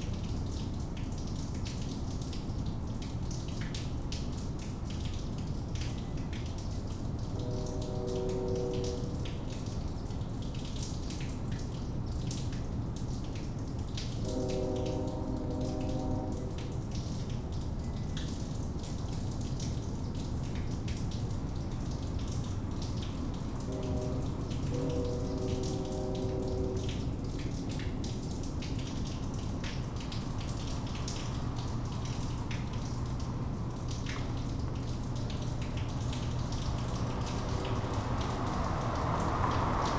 Suffex Green Ln NW, Atlanta, GA, USA - Storm Drain
A storm drain in the neighborhood dripping after a rainstorm. A train comes by, cars pass the bend in the road where the drain is located, and a repair person for the apartment complex passes the recorder multiple times. Other people in the neighborhood also passed behind the recorder, which resulted in footsteps on the recording. Recorded with a Tascam Dr-100mkiii and a wind muff.
Georgia, United States of America